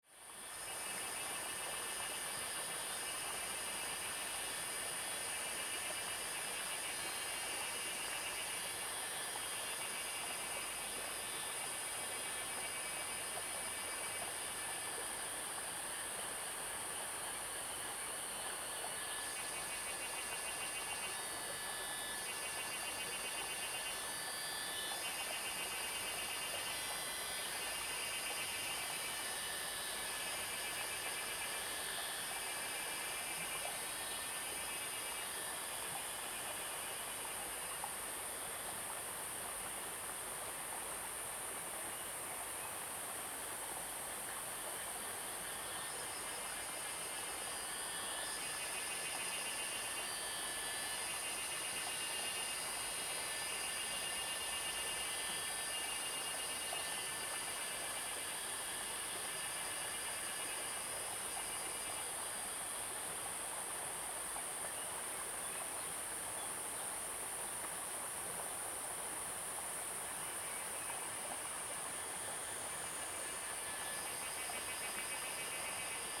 種瓜路, 桃米里草楠 - Sound of water and Cicada
Cicada sounds, Sound of water
Zoom H2n MS+XY
18 May 2016, 11:46